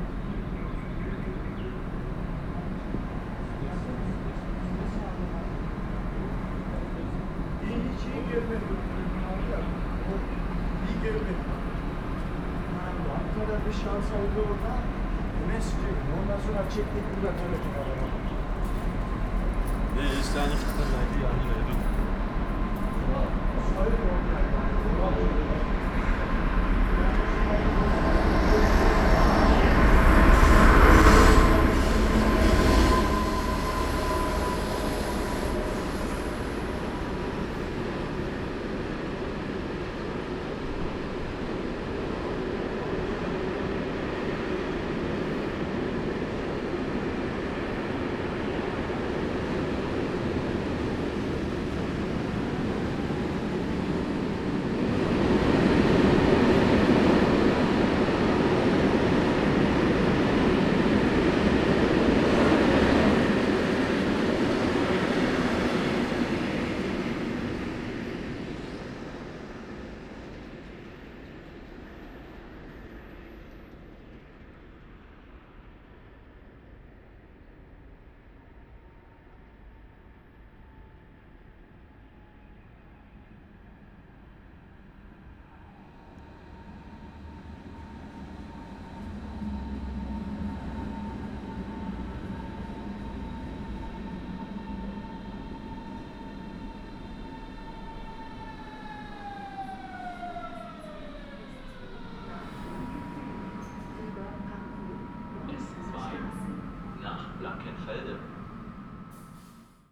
S-Bahn station ambience at midnight, a nightingale sings in the nearby little wood, a freight train rushes through (loud), suburb trains arrive and depart, people talking...
(Sony PCM D50, DPA4060)
Berlin, Germany, May 2019